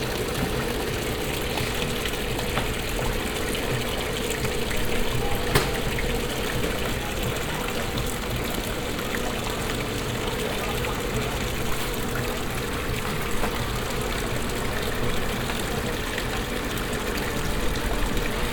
saint remy de provence, fountain and market
At the square in front of the traditional hotel de ville of the village. The sound of a fountain surrounded by market stalls.
international village scapes - topographic field recordings and social ambiences
Saint-Rémy-de-Provence, France, 26 August 2011